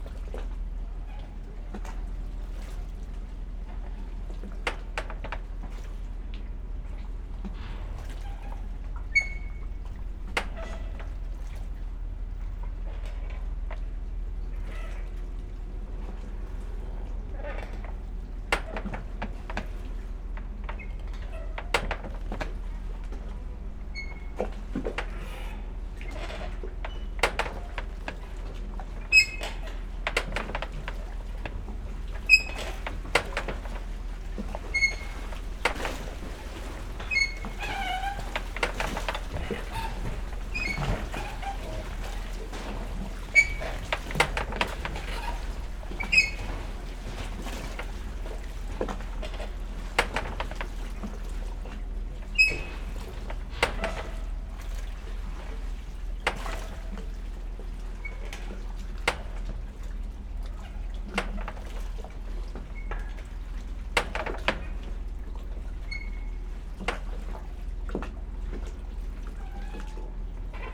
undulating chain...coast guard wharf at Donghae...